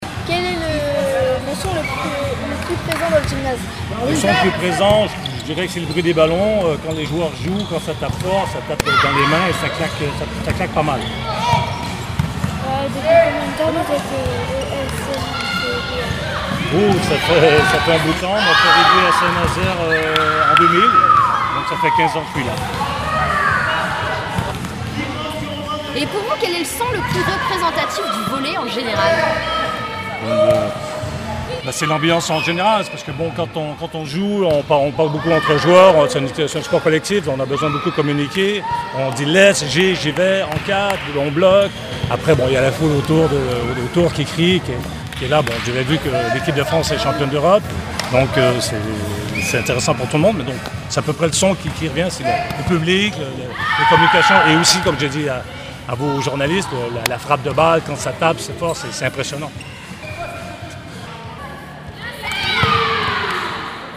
Saint-Nazaire, France - Stage Volley
Florentin et Mathéo ont interviewé Roger Vallée, entraineur au SNVBA lors d'un stage pour ados organisé par le club et l'O.M.J.
Roger Vallée parle des sons représentatifs de son sport...la preuve par le son...
23 October 2015, 15:30